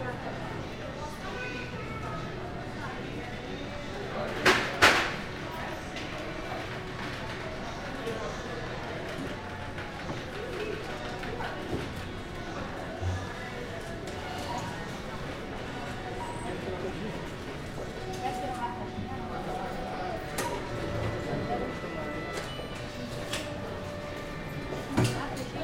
Perugia, Italy - inside the supermarket